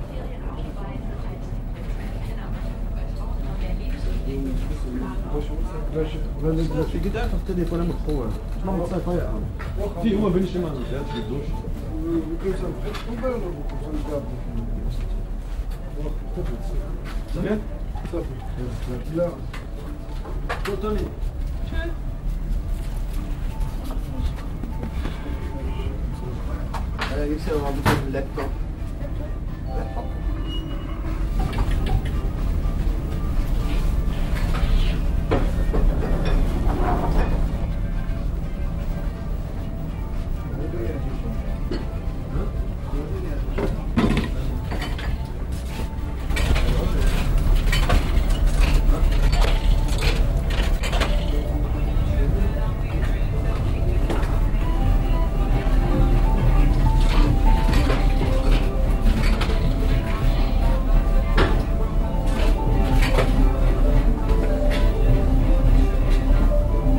atmo in einem spielsalon, mittags
project: :resonanzen - neanderland soundmap nrw: social ambiences/ listen to the people - in & outdoor nearfield recordings